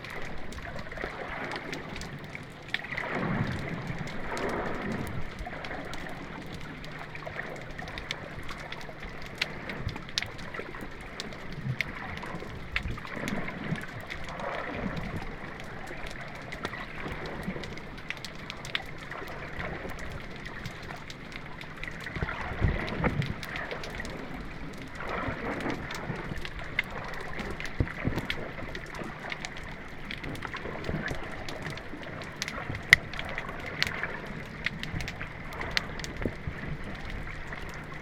Arseniou, Kerkira, Greece - Water
Recording with composer Elias Anstasiou